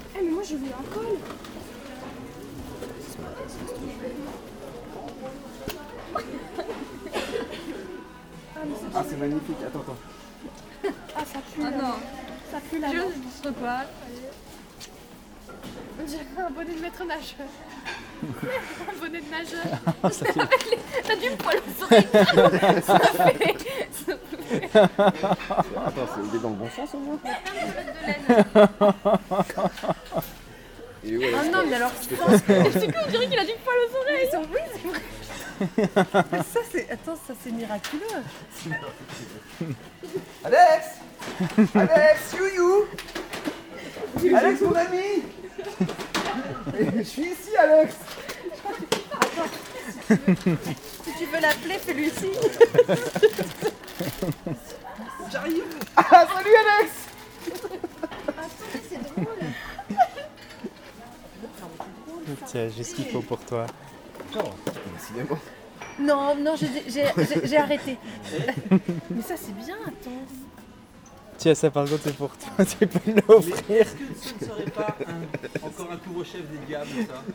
Recording of the clients in the supermarket just before Christmas.
France, 24 December